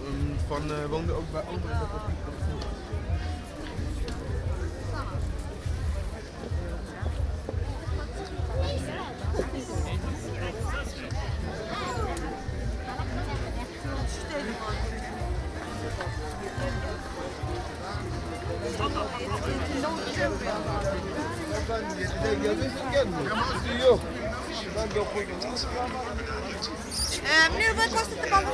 The annual Dutch celebration of Koningsdag (Kings day) with markets, fair and many different events. Recorded with a Zoom H2 with binaural mics.